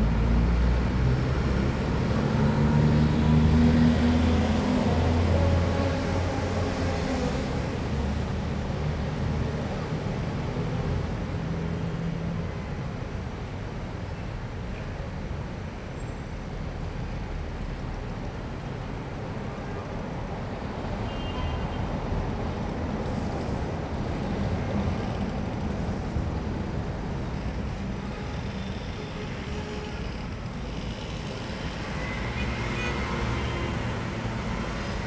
Rue el Oraibi Jilali, Casablanca, Morocco - Hôtel les Saisons - Chambre 610
Bruits de la rue, saisi du 6ème étage. Enregistreur en équilibre sur le balcon.
28 March 2018, 22:30